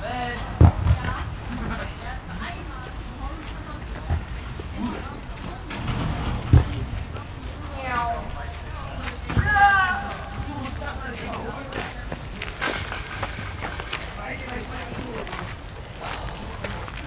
henriettenpark, leipzig lindenau.
jugendliche beim spielen im henriettenpark, dazu fahrradfahrer & passanten.